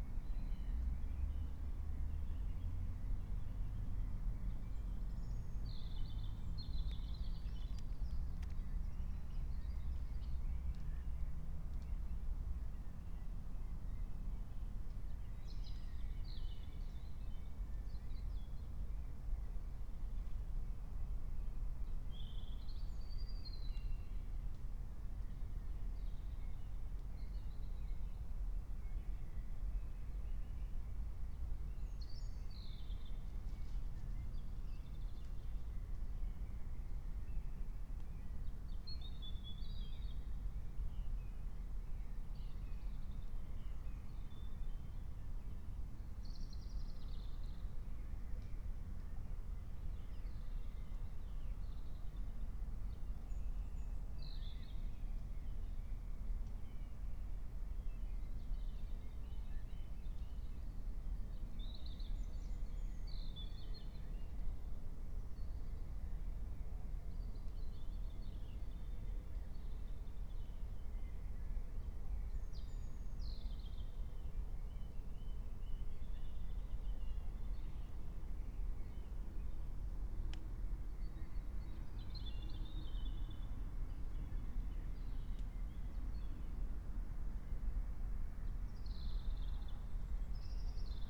04:45 Berlin, Königsheide, Teich - pond ambience